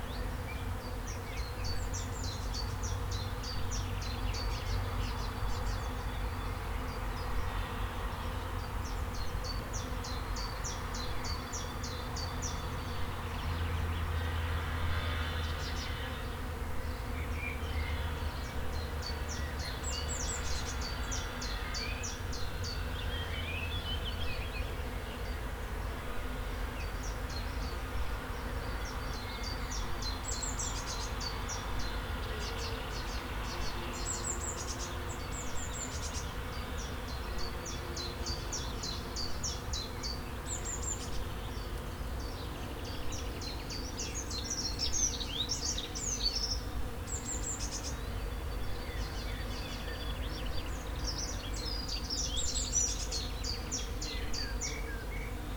Lindlarer Str., Lohmar, Deutschland - Bienen im Weissdorn, Vögel mit Kreissäge
It is located near a forest and a field. The bees enjoy themselves in the hawthorn. Recordet with Zoom H4n on bench in our garden.